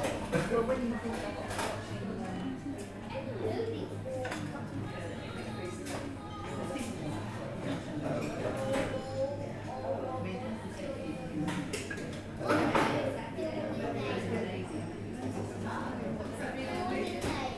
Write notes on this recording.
The View is a relatively new building on Teignmouth sea front. The accousics are quite soft as there are sofas and easy chairs in the cafe. Recorded on a Zoom H5.